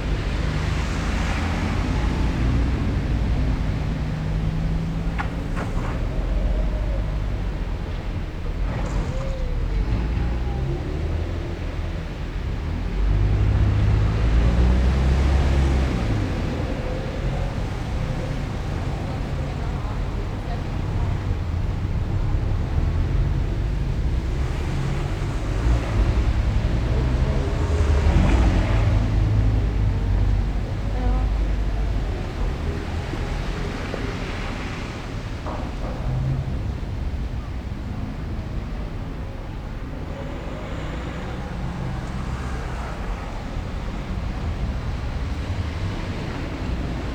Berlin: Vermessungspunkt Friedelstraße / Maybachufer - Klangvermessung Kreuzkölln ::: 09.12.2010 ::: 16:12
Berlin, Germany, 9 December 2010